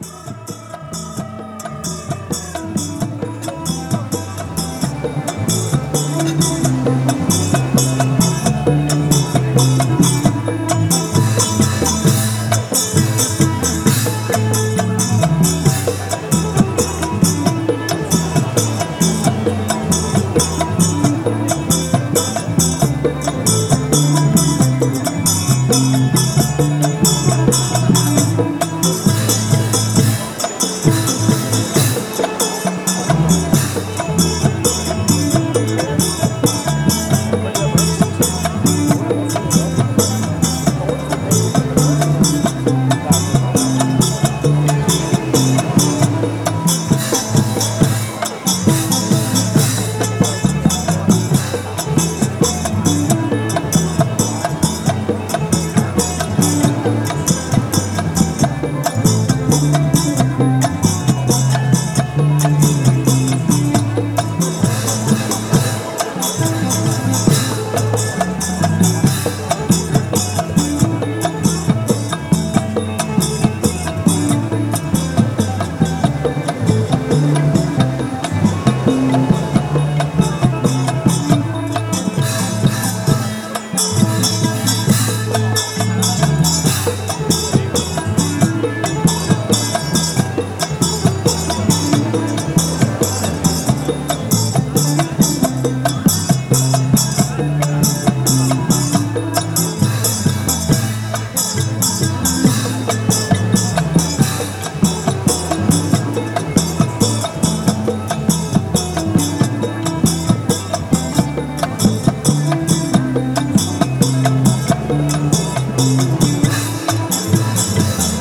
Khuekkhak, Takua Pa District, Phang-nga, Thailand - Great live band at Sarojin with dancers
binaural recording with Olympus